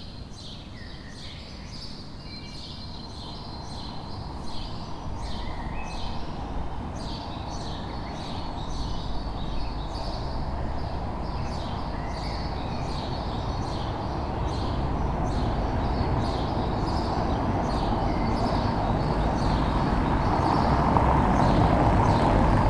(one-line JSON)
{"title": "Berlin: Vermessungspunkt Friedel- / Pflügerstraße - Klangvermessung Kreuzkölln ::: 22.05.2011 ::: 05:25", "date": "2011-05-22 05:25:00", "latitude": "52.49", "longitude": "13.43", "altitude": "40", "timezone": "Europe/Berlin"}